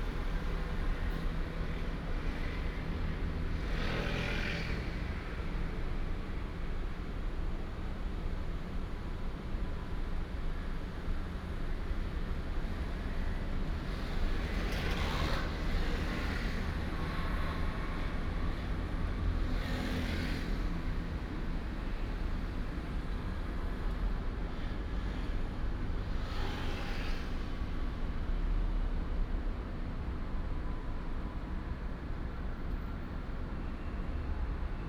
{"title": "空軍十村, Hsinchu City - PARKING LOT", "date": "2017-09-19 18:18:00", "description": "Off hours, The garbage truck arrives at the sound, Formerly from the Chinese army moved to Taiwans residence, Binaural recordings, Sony PCM D100+ Soundman OKM II", "latitude": "24.81", "longitude": "120.97", "altitude": "21", "timezone": "Asia/Taipei"}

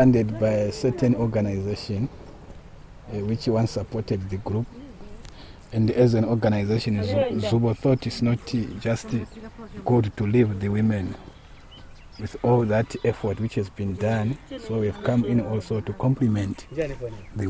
{"title": "Sebungwe River Mouth, Binga, Zimbabwe - These are the Tuligwasye Women...", "date": "2016-05-24 12:15:00", "description": "Mugande, Zubo's project officer describes where we are and introduces us to the Tuligwasye Women Group who are working at this site maintaining a garden during the rainy season. Zubo Trust recently assisted the women in the construction of a pond for fish farming.\nZubo Trust is a women’s organization bringing women together for self-empowerment.", "latitude": "-17.76", "longitude": "27.24", "altitude": "488", "timezone": "Africa/Harare"}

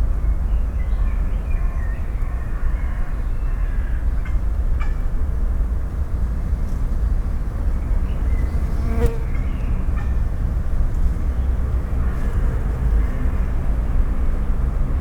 {"title": "Parc Duden, Forest, Belgique - city drone", "date": "2009-06-26 16:20:00", "description": "Levels are pushed high on this recording, just to hear if something finally comes out of the drone hum.\nSD-702, DPA 4060, AB position.", "latitude": "50.82", "longitude": "4.33", "altitude": "92", "timezone": "Europe/Brussels"}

